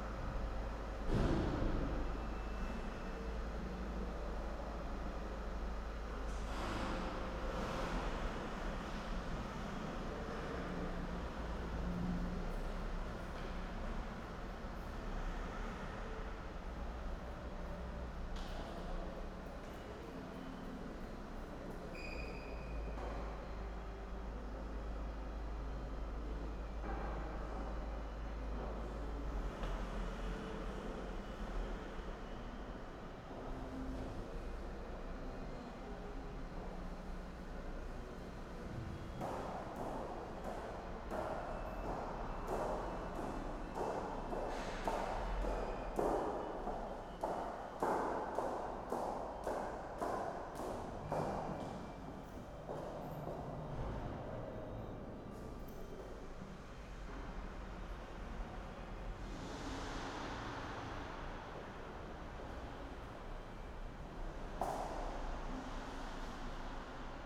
porto, maus habitos - garage 3rd floor
garage 3rd floor haus habitos, sounds and echos from the futureplaces festival
Oporto, Portugal, 14 October 2010